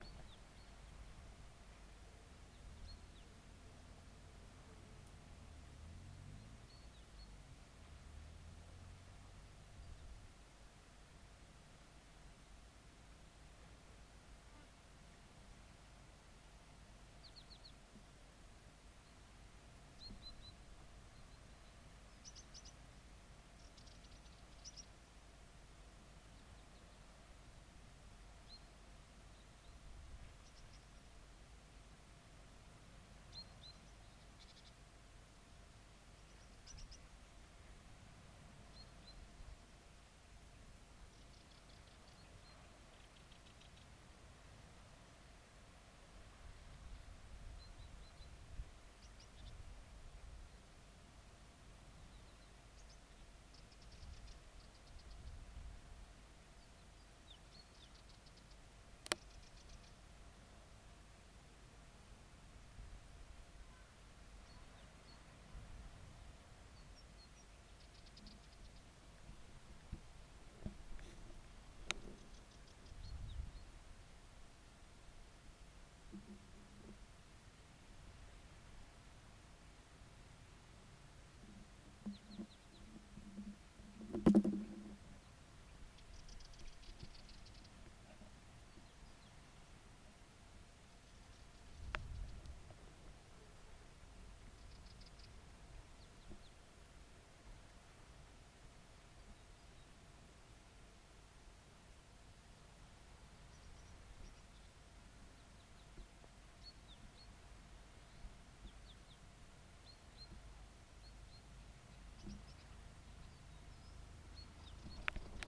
Keratokampos, Viannos, Greece - Bird Singing
This year various species of birds have migrated to Crete. Keratokampos is just a little bit greener than other parts of the south, and is an attractive destination of these birds.
September 2017